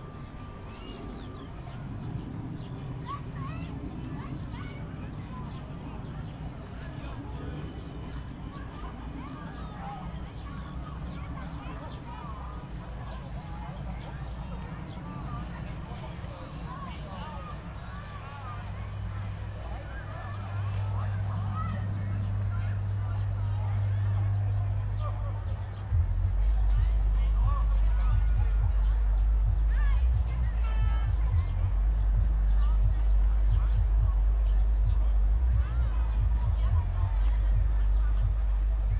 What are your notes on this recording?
one of my favourite places on Tempelhof revisited on a summer weekend evening. distant hum of thausands of people in the park, deep frequencies of a sound system all over the place. (SD702, Audio Technica BP4025)